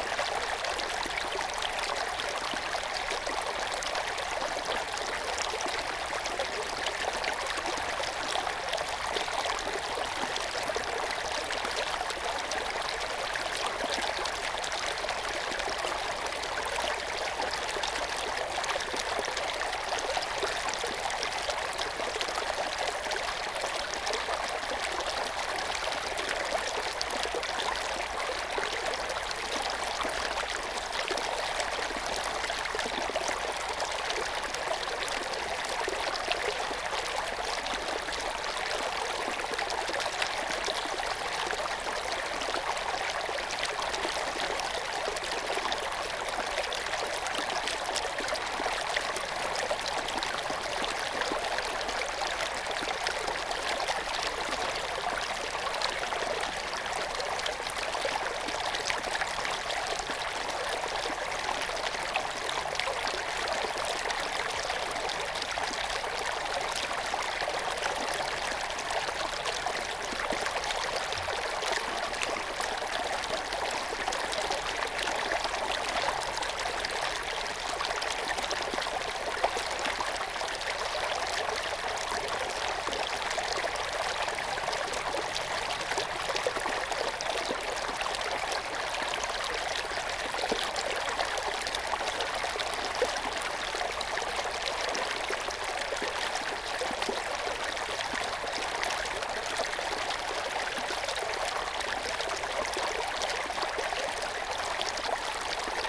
Berlin Buch, Lietzengraben / Graben 25 Schönerlinde - ditch, water inflow
Ditch Graben 25 Schönerlinde inflow into Lietzengraben. The Lietzengraben is a partly artificial watercourse located on the north-eastern outskirts of Berlin. Its headwaters are in the Schönower Heide, west of the district of Schönow in the state of Brandenburg, which belongs to the town of Bernau bei Berlin. After about 10 kilometres, it flows into the Panke on the right between Berlin-Buch and Berlin-Karow. The 45.3-square-kilometre catchment area (14.8 km² on Berlin territory) includes the former sewage fields between Schönerlinde and Hobrechtsfelde, the Bucher Forst forest designated as a landscape conservation area with the Bogenseekette and Lietzengrabenniederung NSG formed from two sub-areas, and the Karower Teiche NSG. The Lietzengraben is particularly important for the preservation of the wetland biotopes in the nature conservation areas.